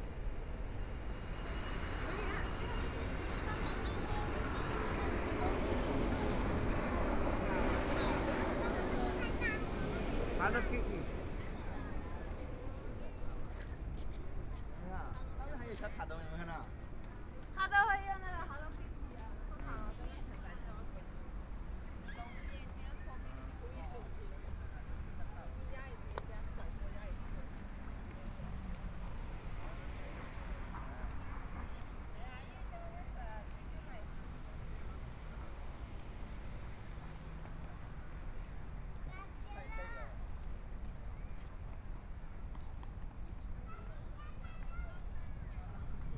Yumen St., Taipei City - Holiday
Holiday, Walking towards the north direction, A lot of people in the street, Sunny mild weather, Aircraft flying through
Binaural recordings, ( Proposal to turn up the volume )
Zoom H4n+ Soundman OKM II
Taipei City, Taiwan